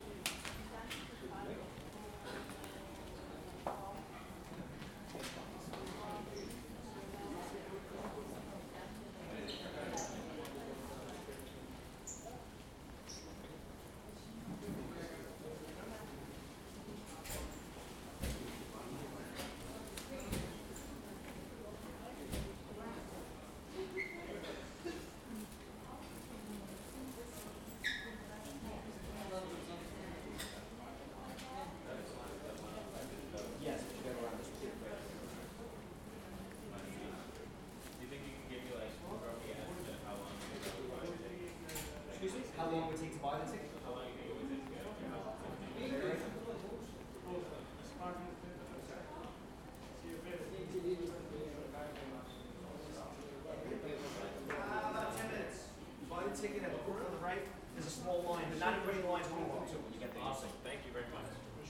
Murray Hill, New York City, New York, USA - empire state buiding
NYC, empire state building, observation deck 86th floor, inside;
NY, USA